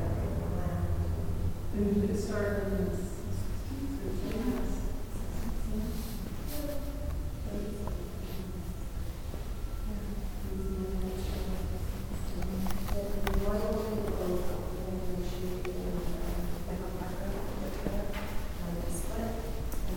in a local modern art gallery, high reflective walls, people talking, footsteps
soundmap international
social ambiences/ listen to the people - in & outdoor nearfield recordings